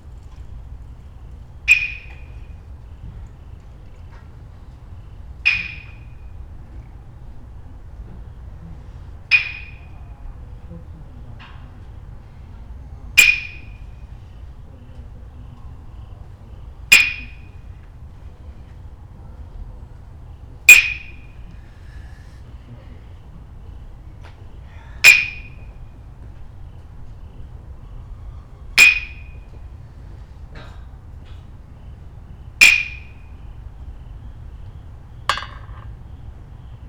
room window, Gojo Guest House Annex, Kyoto - at 20:00, every night
every night, percussionist sounding the streets around, he says it is for good luck